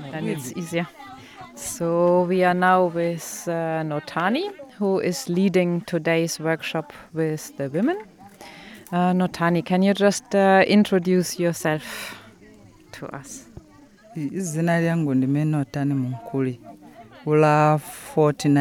together with Donor Ncube, we talk to Notani Munkuli, an experienced weaver from Bunsiwa. Zubo Trust had sent her and five women from other wards for further training to Lupane Women Centre; now she's passing on her skills; Notani knows a lot about the practice of weaving in this area; what can she tell us about the history of the craft....?
Matabeleland North, Zimbabwe